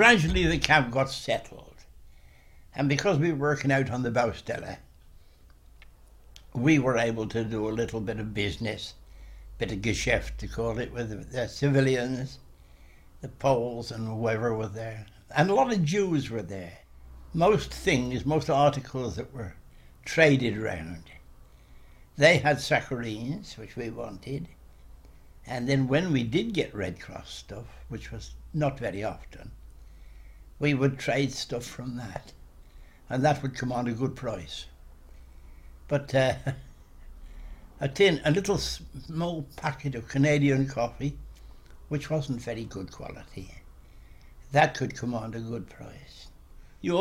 Blechhammer, Kędzierzyn-Koźle, Poland - A POW Remembers
An aural document by Harold Pitt, POW No 5585 who was captured 26th May 1940 and spent some years at this spot in Bau und Arbeits Battallion (BAB) 21, a work camp for British Prisoners of War. He was liberated by the Americans in April 1945. He died 10th April (my birthday) 2011 aged 93. He was my father.
powiat kędzierzyńsko-kozielski, województwo opolskie, Polska, 7 April 2007